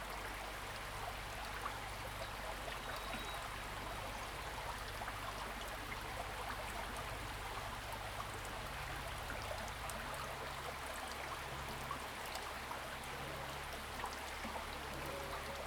streams, Small streams
Zoom H6 XY